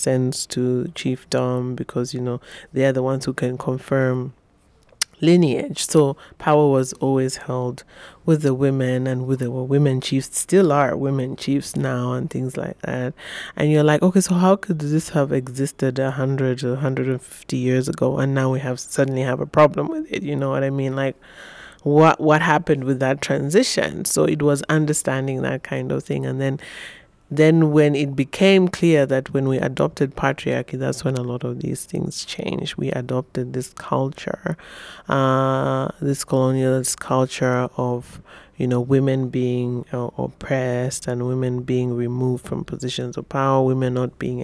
Lusaka National Museum, Lusaka, Zambia - Samba Yonga researching home and abroad...
… after interviewing the media consultant and journalist Samba Yonga on her role as co-founder of the Women’s history museum in June, I managed to catch up with Samba for a more extensive interview on her personal story; actually it was the very last day and even hours of my stay in Zambia… so here’s an excerpt from the middle of the interview with Samba, where she lets us share into her view back home from London and, her reflections and research about the Zambian and wider expat community abroad…
listen to the entire interview with Samba Yonga here: